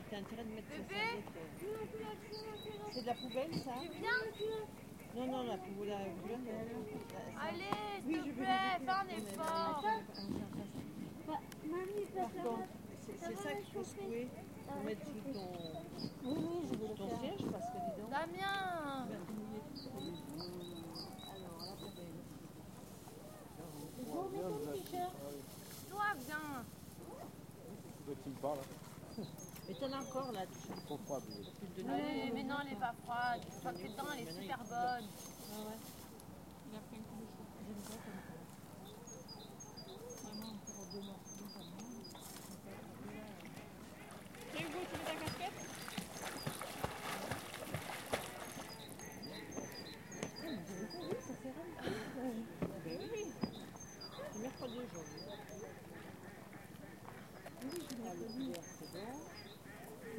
People at the beach of a small lake in Luberon.
Motte d'Aigues (La), France